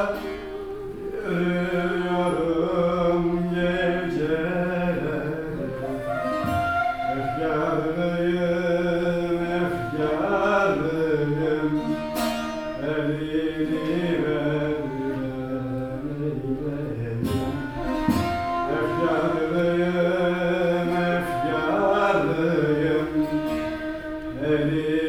Alevitisches Kulturzentrum, Hamm, Germany - Echos of Nazim's voice...
An evening of poetry and music at the Alevi Cultural Centre… as we slip in, a song accompanied on the Sas, then a poem in Turkish and German: Nazim Hikmet’s “Curriculum Vitae”… it’s almost the end of the event; the mics are “playing up”; adding an eerie effect to “Nazim’s voice” resounding from the lyrics…
Ein Lyrikabend im Alevitischen Kulturzentrum… ein Lied begleitet auf der Sas; dann ein Gedicht auf Türkisch und Deutsch: Nazim Hikmet’s “Lebenslauf”… die Veranstaltung geht schon beinahe dem Ende entgegen, und die Microphone “verabschieden sich”… “Nazim’s Stimme” hallt aus seinen Versen unheimlich wieder…
12 September 2014